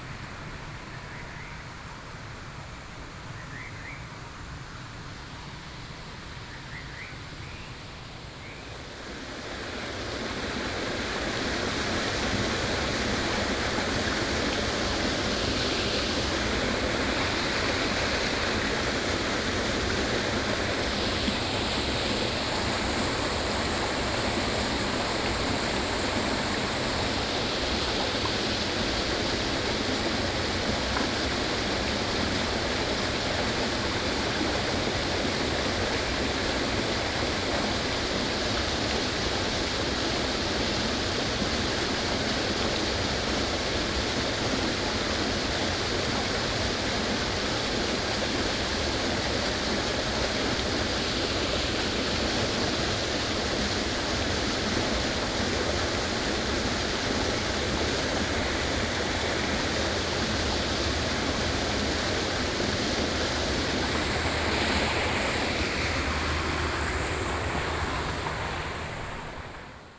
Balian River, Sec., Balian Rd.New Taipei City - Stream
Stream of sound, birds
Sony PCM D50
Xizhi District, New Taipei City, Taiwan